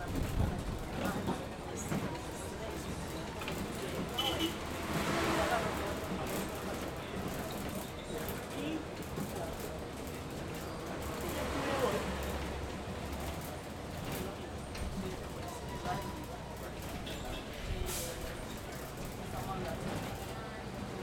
Cl., Medellín, Belén, Medellín, Antioquia, Colombia - MetroPlus, recorrido estacion Universidad De Medellin - Los Alpes.
Es un paisaje muy contaminado auditivamente, donde hace alarde el constante bullicio humano y la maquinaria destinada para el transporte. Lo cual opaca casi totalmente la presencia de lo natural y se yuxtapone el constante contaminante transitar humano.